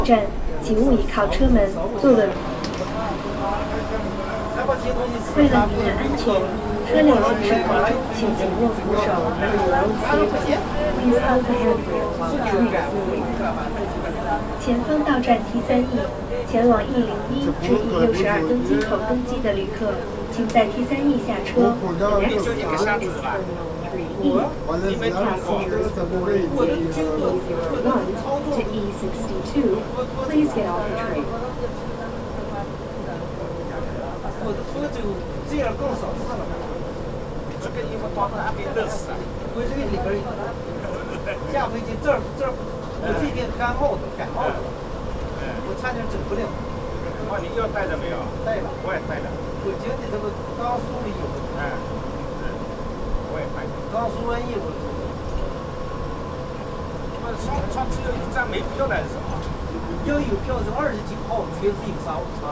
Chaoyang, Pekin, Chiny - Underground railway to national airport
Underground railway transport from national to international airport